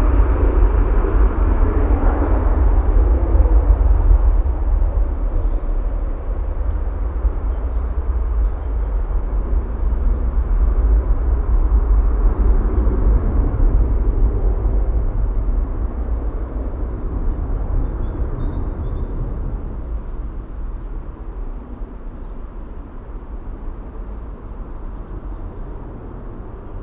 ratingen, kaiserswertherstr, feldweg
flugzeugüberflug an freiem feld, nahe autobahn 52, morgens
soundmap nrw:
social ambiences/ listen to the people - in & outdoor nearfield recordings